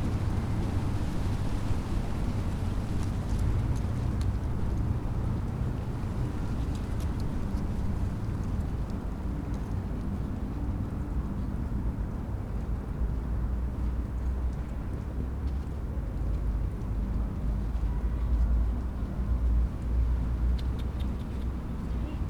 cold and stormy sunday, dry leaves of a bush rustling in the wind
the city, the country & me: march 17, 2013
Berlin, Germany, 17 March, 17:43